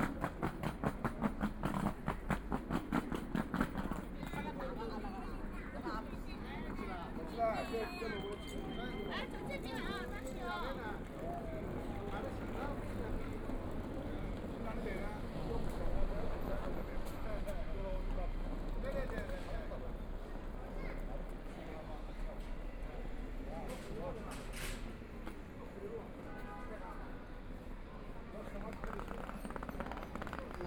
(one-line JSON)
{"title": "Quxi Road, Huangpu District - on the road", "date": "2013-11-26 17:07:00", "description": "Walking on the road, Follow the footsteps, Traffic Sound, Students voice conversation, Pulling a small suitcase voice, Binaural recording, Zoom H6+ Soundman OKM II", "latitude": "31.21", "longitude": "121.49", "altitude": "10", "timezone": "Asia/Shanghai"}